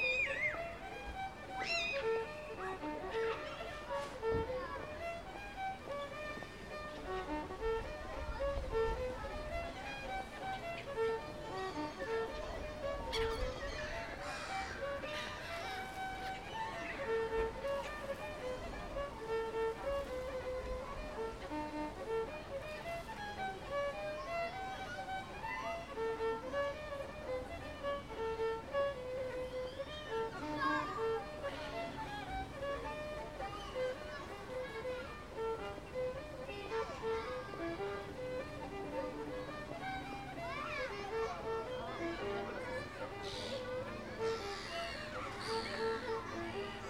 Millstream, Salisbury, UK - 010 Busker, birds, passersby
10 January 2017